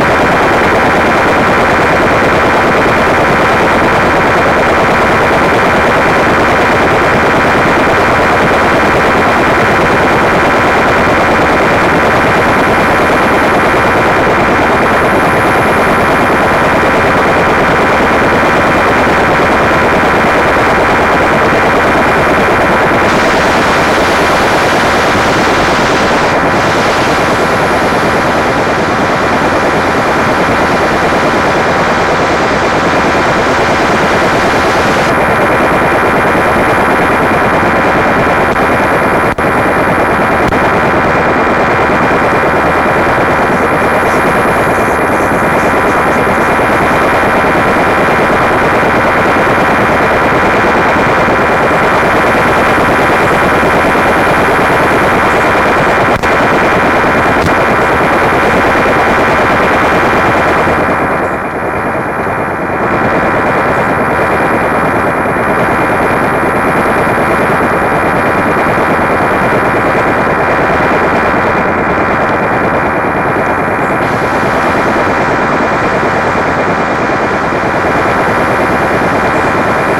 La Galaube-Tarn 81-France / Conspiracy And Crash/Lab01/Install+capt. sonores/Isio4 <++
CONSPIRACY AND CRASH0809022008
>CAPTATIONS SONORES DES FREQ.RADIO AM/ FLUX ALEATOIRE
>ANTENNE RELAI BASE MILITAIRE/
SOUS MARIN/FRANCE
INSTALLATION>
14 February 2008, 10:00